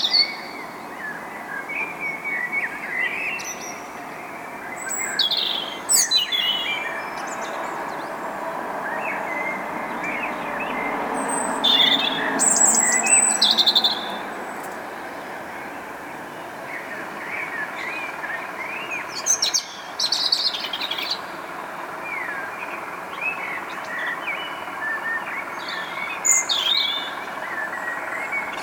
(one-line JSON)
{"title": "tondatei.de: ottostraße köln", "date": "2010-07-13 03:43:00", "description": "vogelsang, straße, straßenbahn", "latitude": "50.95", "longitude": "6.92", "altitude": "53", "timezone": "Europe/Berlin"}